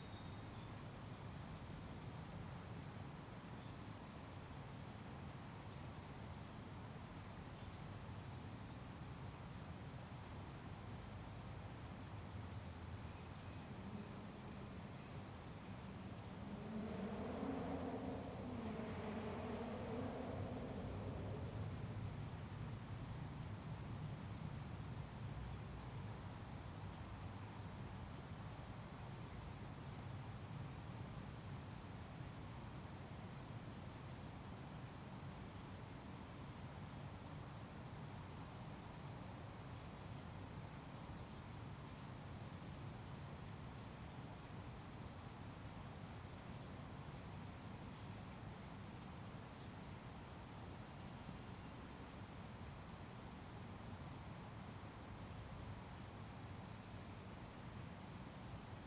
I used an H4N Pro Zoom Recorder for this field recording. I placed the recorder on a tripod nearby a creek surrounded by trees and wildlife. This location also happened to be close to a highway.